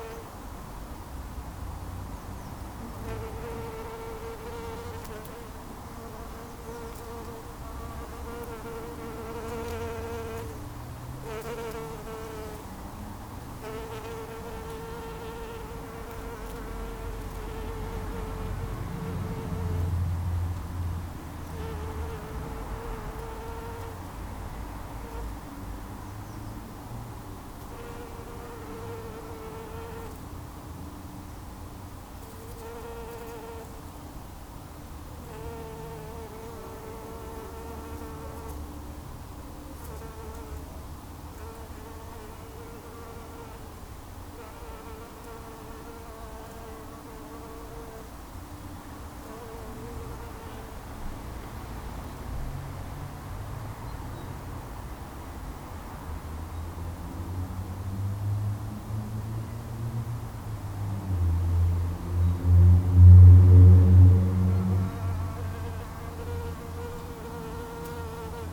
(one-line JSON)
{"title": "A Japanese Quince bush full of bees - bees in the bush", "date": "2014-04-13 16:45:00", "description": "One of the first days of spring, and the garden felt beautifully alive. It was a Sunday, and we were returning home from a wonderfully romantic Wedding. The sunny street was its usual quiet Sunday self, with the murmur of traffic from the nearby main road drifting over and mixing with the chatter of the birds in the trees in the gardens. As we unpacked the car, I noticed that many small bees were busily working at the pink flowers in a nearby quince bush. I remembered this is an annual sound for us, and I popped my little recorder down inside the foliage to capture the sound of this labour. You can hear along with their buzzing, the light hand of the wind rifling through the stiff branches, and the aeroplanes that constantly pass over this area, giving the days in our street their distinctive sense of time. There is also a bird perching in the winter flowering cherry blossom tree, whose song delights for the last portion of the recording.", "latitude": "51.44", "longitude": "-0.97", "altitude": "53", "timezone": "Europe/London"}